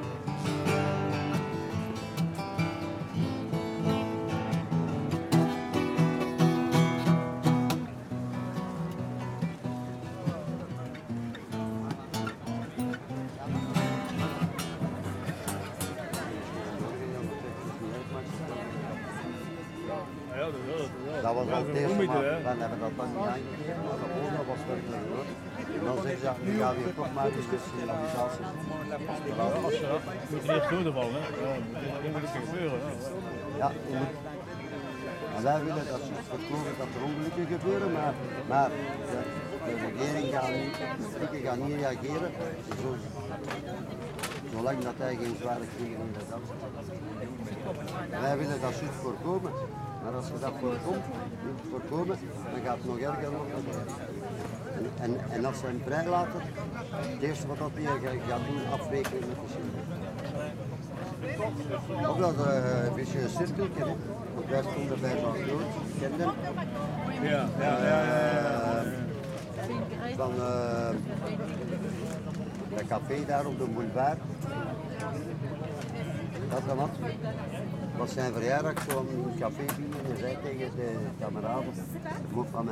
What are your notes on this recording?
A tree was planted 12 years ago to honor the homeless who died on the streets. Guitar player, conversations. Un arbre a été planté il y a 12 ans pour rendre hommage aux morts de la rue. Chaque année la liste de tous ceux qui sont morts dans la rue est lue ici. Tech Note : Olympus LS5 internal microphones.